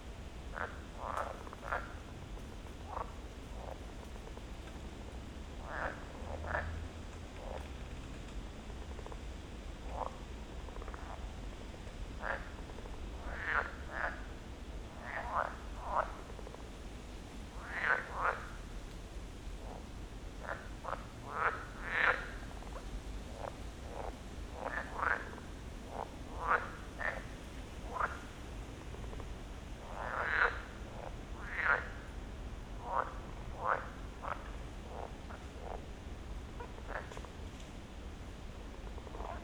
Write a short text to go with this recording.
late night visit at the frog pond, fresh wind in the trees, (SD702, Audio Technica BP4025)